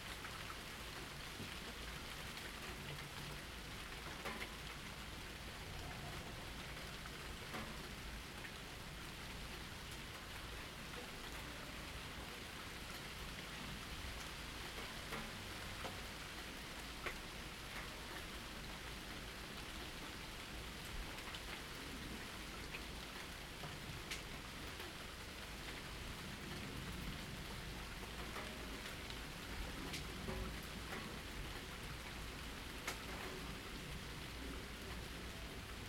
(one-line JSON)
{"title": "U Kněžské louky, Praha, Česko - Rainy day, quiet household", "date": "2019-07-21 09:43:00", "description": "Light rain outside, water drips, dishes clack in the kitchen. Rain intensifies and clears again. Bird chirps.\nZoom H2n, 2CH, set on a shelf near open balcony door.", "latitude": "50.09", "longitude": "14.49", "altitude": "256", "timezone": "Europe/Prague"}